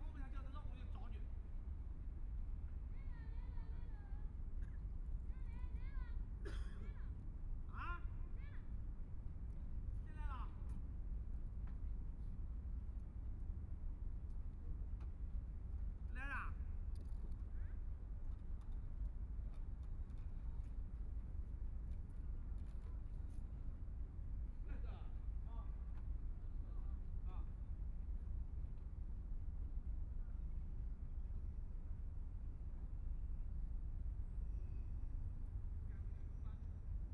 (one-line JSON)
{"title": "Huangpu River, Shanghai - On the Bank of the river", "date": "2013-11-29 13:24:00", "description": "Sitting on the Bank of the river, The cleaning staff is the rest of the conversation sound, The river running through many ships, Binaural recording, Zoom H6+ Soundman OKM II", "latitude": "31.20", "longitude": "121.49", "altitude": "8", "timezone": "Asia/Shanghai"}